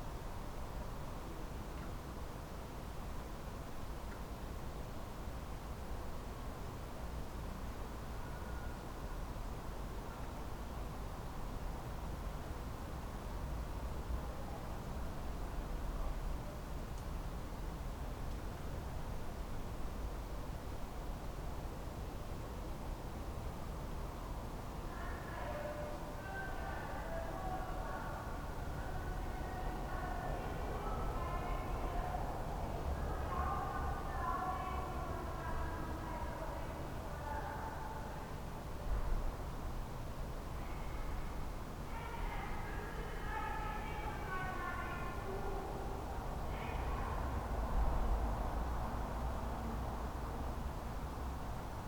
she can be heard often, on quiet sunday afternoons or at night. sometimes i see her passing-by at my door.
(Sony PCM D50)
Berlin, Germany, 1 May